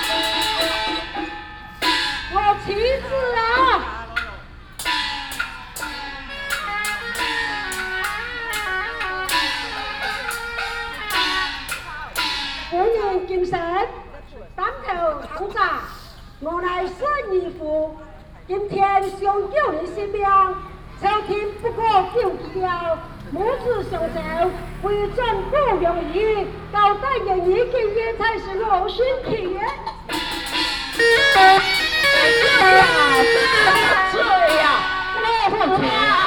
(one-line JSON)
{"title": "義勇廟, Xinpu Township - In the square of the temple", "date": "2017-09-19 19:31:00", "description": "In the square of the temple, Hakka Opera, Binaural recordings, Sony PCM D100+ Soundman OKM II", "latitude": "24.83", "longitude": "121.08", "altitude": "71", "timezone": "Asia/Taipei"}